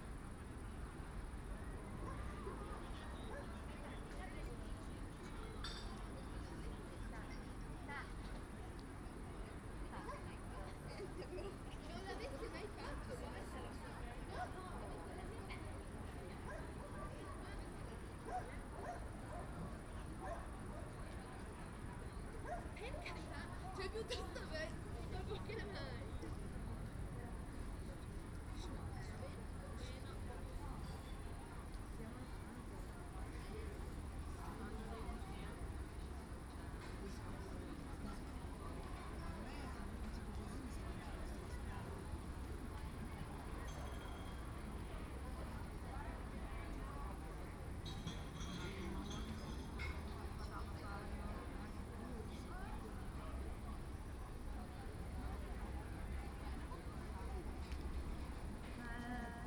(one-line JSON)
{"title": "Ascolto il tuo cuore, città. I listen to your heart, city. Chapter CXIII - Valentino Park in summer at sunset soundwalk and soundscape in the time of COVID19: soundwalk & soundscape", "date": "2020-06-30 21:15:00", "description": "\"Valentino Park in summer at sunset soundwalk and soundscape in the time of COVID19\": soundwalk & soundscape\nChapter CXIII of Ascolto il tuo cuore, città. I listen to your heart, city\nTuesday, June 30th 2020. San Salvario district Turin, to Valentino park and back, one hundred-twelve days after (but day fifty-eight of Phase II and day forty-five of Phase IIB and day thirty-nine of Phase IIC and day 16th of Phase III) of emergency disposition due to the epidemic of COVID19.\nStart at 9:16 p.m. end at 10:03 p.m. duration of recording 46’50”; sunset was at 9:20 p.m.\nThe entire path is associated with a synchronized GPS track recorded in the (kmz, kml, gpx) files downloadable here:", "latitude": "45.06", "longitude": "7.69", "altitude": "221", "timezone": "Europe/Rome"}